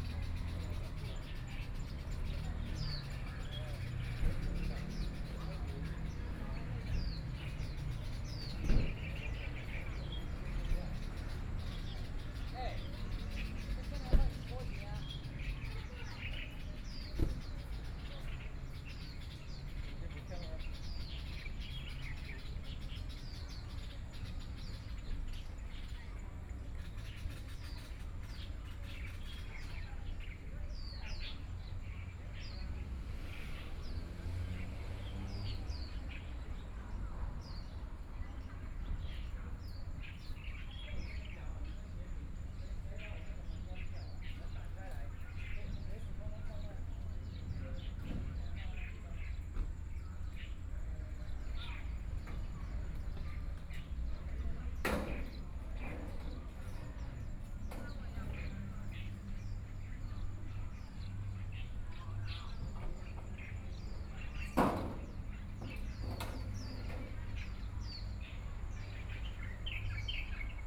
蘇澳鎮北濱公園, Yilan County - in the Park
in the Park, Traffic Sound, Birdsong sound
Yilan County, Taiwan, 28 July 2014, 15:48